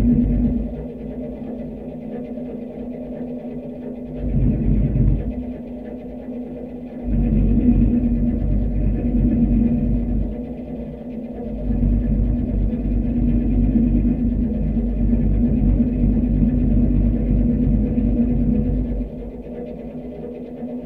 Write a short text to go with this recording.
contact microphone on a base of mechanical advertising board